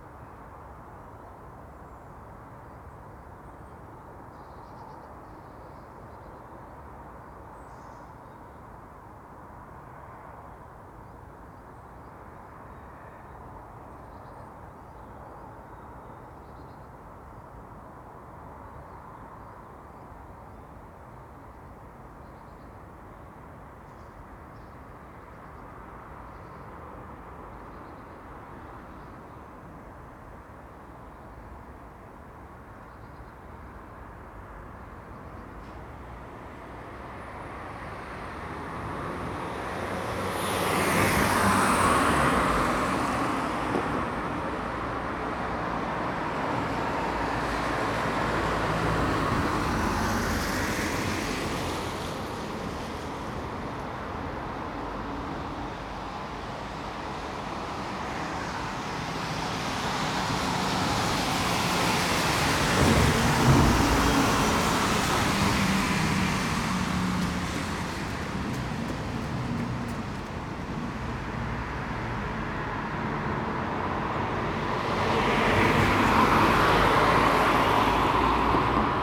The Drive Westfield Drive Oakfield Road Kenton Road
The lightest of snow falls
dusts the ground
Six runners
six walkers
Mock-Tudor wood
on the ugly houses
closed curtains